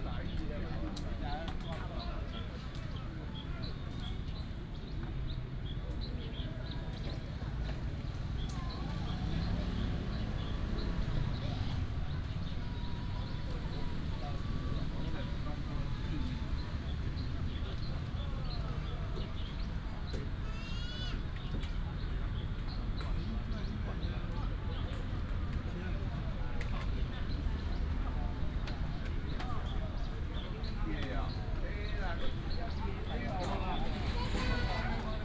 Yuanlin Park, Changhua County - Walk through the Park
Walk through the Park, Traffic sound, Many people play chess, sound of birds, Children's play area